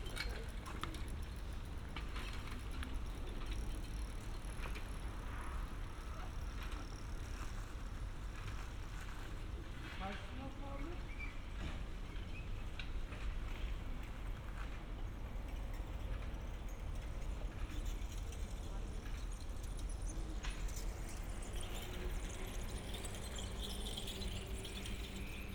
Berlin: Vermessungspunkt Maybachufer / Bürknerstraße - Klangvermessung Kreuzkölln ::: 15.06.2012 ::: 02:32